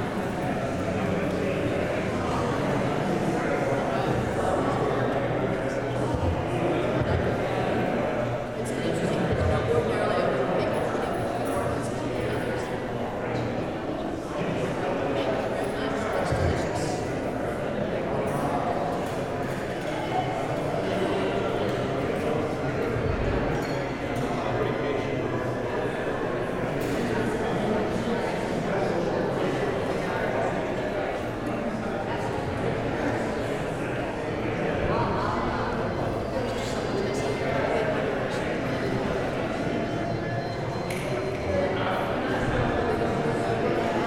{
  "title": "Orlando Airport, waiting in lounge, Florida",
  "date": "2010-05-10 23:35:00",
  "description": "Orlando Airport, Florida. Crowds, Field.",
  "latitude": "28.43",
  "longitude": "-81.31",
  "altitude": "25",
  "timezone": "America/New_York"
}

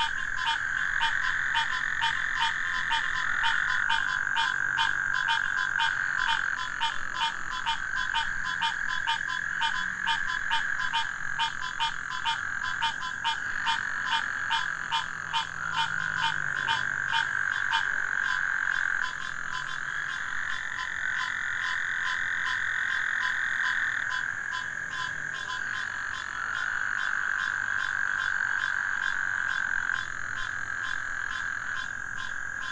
{
  "title": "Frogs rage with desire while train passes, Sugar Land, TX. - Frogs rage with desire while train passes",
  "date": "2012-08-01 12:47:00",
  "description": "Post rain mating calls of several species of frogs and insects, plus approaching passenger train, distant highway, cars, motorcycle, crickets, etc. Oyster Creek, Sugar Land, Texas, suburban, master planned community.\nTascam DR100 MK-2 internal cardioids",
  "latitude": "29.62",
  "longitude": "-95.68",
  "altitude": "30",
  "timezone": "America/Chicago"
}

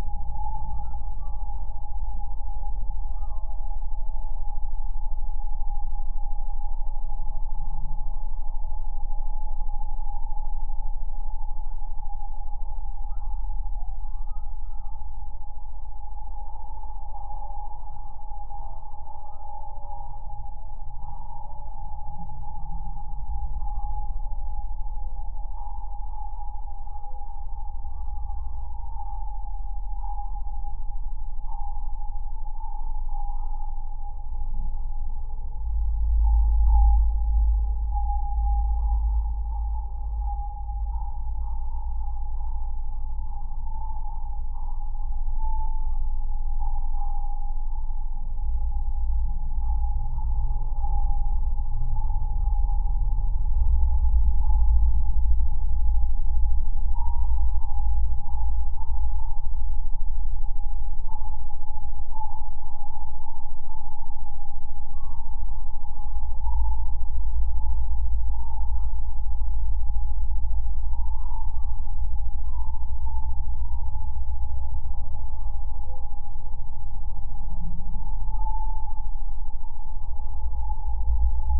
{
  "title": "The Sunken Boat, Oulu, Finland - Various sounds recorded through a steel pillar",
  "date": "2020-05-31 16:43:00",
  "description": "Dogs barking and other various sounds heard through a steel pillar of an installation 'The Sunken Boat' by Herbert Dreiseitl in Toppilansaari, Oulu. Recorded with LOM Geofón and Zoom H5. Gain adjusted and low-pass filter applied in post.",
  "latitude": "65.03",
  "longitude": "25.42",
  "altitude": "4",
  "timezone": "Europe/Helsinki"
}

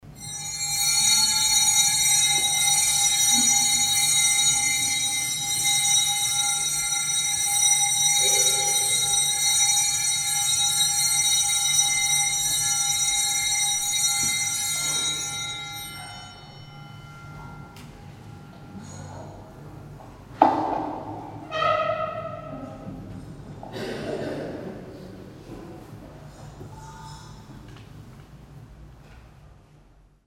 {
  "title": "clervaux, church, mass - clervaux, church, bells and door",
  "date": "2011-07-12 23:10:00",
  "description": "Inside the church at the Mother Gods Procession day. The sound of procession bells and a door.\nClervaux, Kirche, Glocken und Tür\nIn der Kirche bei der Muttergottesprozession. Das Geräusch der Prozessionsglocken und eine Tür. Aufgenommen von Pierre Obertin im Mai 2011.\nClervaux, église, cloches et porte\nÀ l’intérieur de l’église, le jour de la procession de la Vierge. Le son des cloches de la procession et le bruit d’une porte. Enregistré par Pierre Obertin en mai 2011.\nProject - Klangraum Our - topographic field recordings, sound objects and social ambiences",
  "latitude": "50.06",
  "longitude": "6.03",
  "altitude": "358",
  "timezone": "Europe/Luxembourg"
}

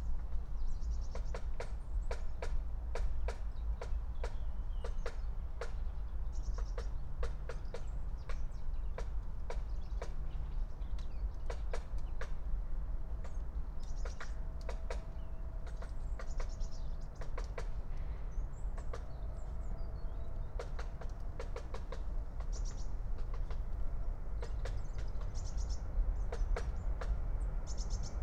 {
  "date": "2022-03-21 09:31:00",
  "description": "09:31 Berlin, Alt-Friedrichsfelde, Dreiecksee - train junction, pond ambience",
  "latitude": "52.51",
  "longitude": "13.54",
  "altitude": "45",
  "timezone": "Europe/Berlin"
}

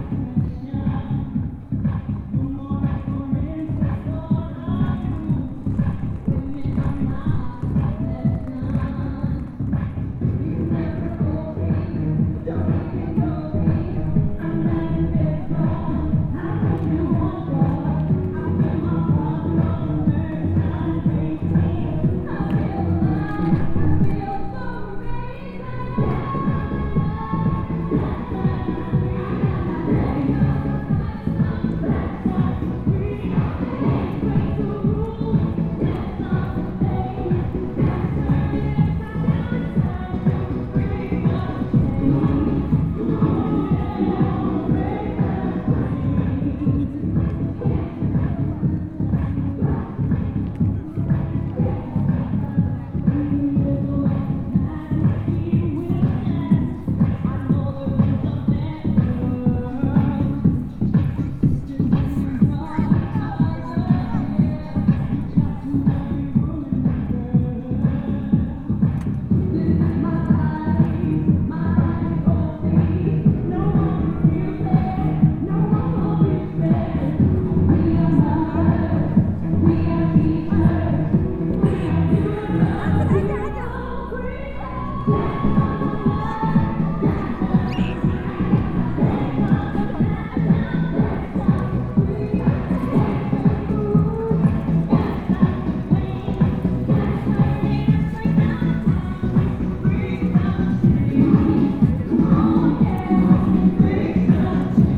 {
  "title": "Katharinenstraße, Dortmund, Germany - onebillionrising, joining the dance...",
  "date": "2018-02-14 16:20:00",
  "description": "...joining the dance… mics in my ears… good to see that quite a few men are joining the dance...\nglobal awareness of violence against women",
  "latitude": "51.52",
  "longitude": "7.46",
  "altitude": "89",
  "timezone": "Europe/Berlin"
}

